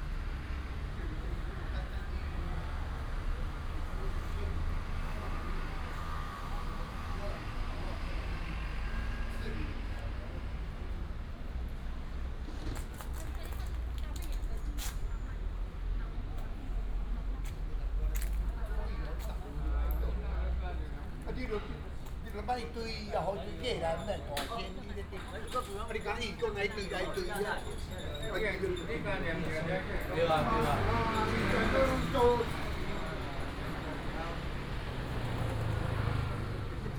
Dihua Park, Datong Dist., Taipei City - in the Park
in the Park, Traffic sound, sound of birds
10 April 2017, ~16:00